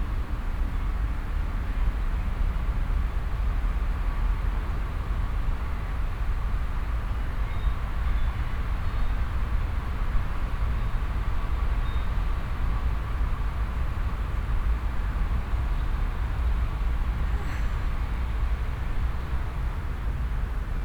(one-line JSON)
{"title": "Gruia, Klausenburg, Rumänien - Cluj, Fortress Hill project, emotion tube 2", "date": "2014-05-29 15:30:00", "description": "At the temporary sound park exhibition with installation works of students as part of the Fortress Hill project. Here the sound of screams created with the students during the workshop and then arranged for the installation coming out of concrete tube at the park. In the break beween the screams and in the background traffic, birds and city noise.\nSoundmap Fortress Hill//: Cetatuia - topographic field recordings, sound art installations and social ambiences", "latitude": "46.77", "longitude": "23.58", "altitude": "375", "timezone": "Europe/Bucharest"}